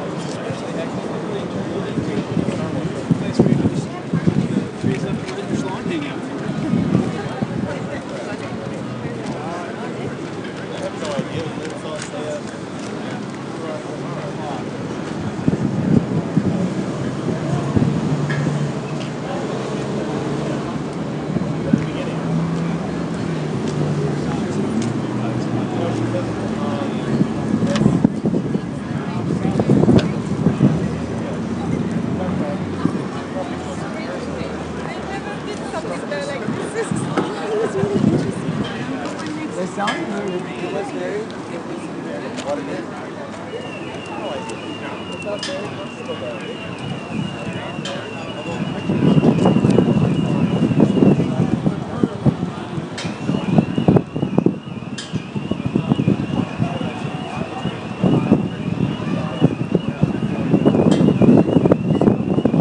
Castro, San Francisco, CA, USA - Sunday afternoon @ Castro and 16th.
At the corner of Castro and 16th is a colorful hangout. Outside seating for Twin Peaks bar, and nudists congregate to socialize in the flamboyant Castro neighborhood.